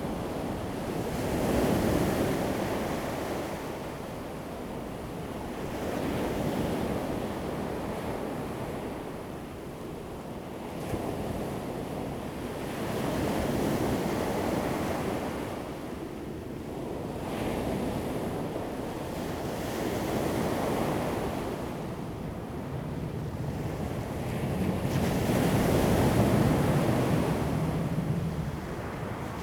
Sound of the waves, Aircraft flying through, The weather is very hot
Zoom H2n MS+XY